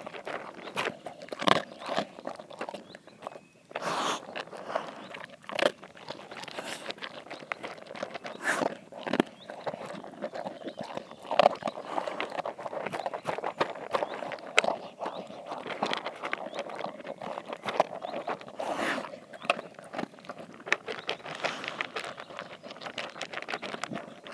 Court-St.-Étienne, Belgique - Dog eating
In the all-animals-eating collection, this is the time of the dog. On a bright sunday morning, Bingo the dog eats its food. It makes a lot of cronch-cronch, but also some pffff and burp... I have to precise this dog is completely crazed !